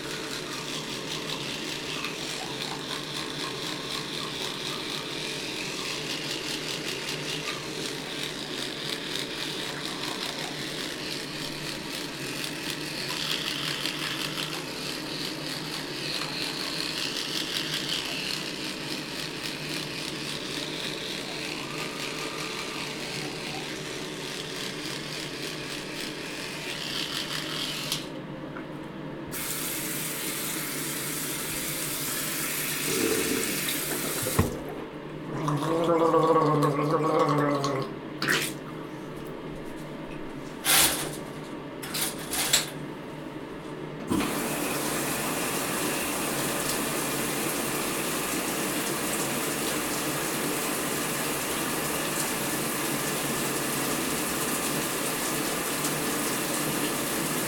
401 South Lucas Street - Early morning bathroom routine